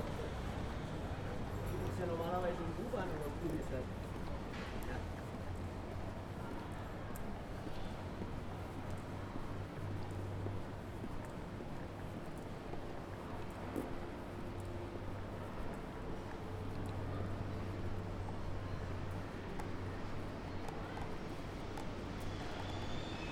reverberation, noise to silence
Frankfurt, Germany, 1 September 2011, 10:20